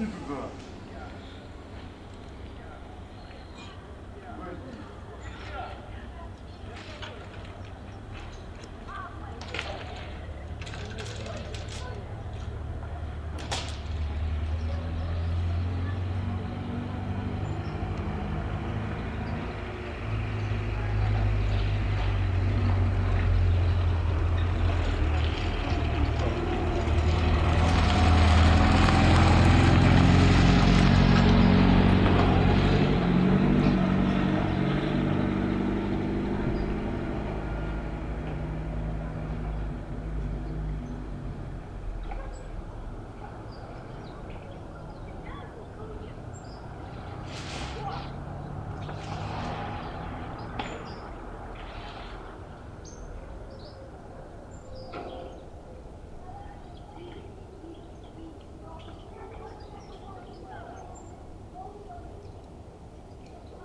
Различные интершумы
Звук: Zoom H2n